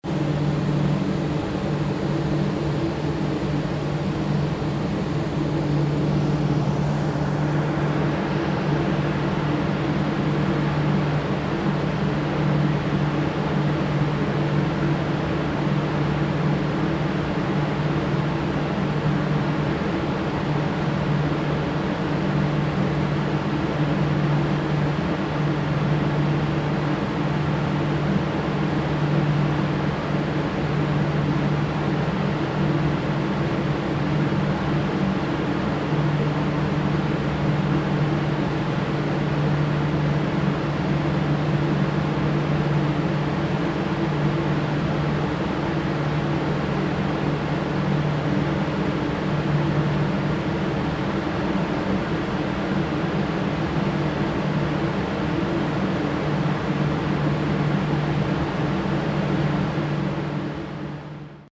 ferry, arrival, funnel at car deck
recorded on night ferry trelleborg - travemuende, august 10 to 11, 2008.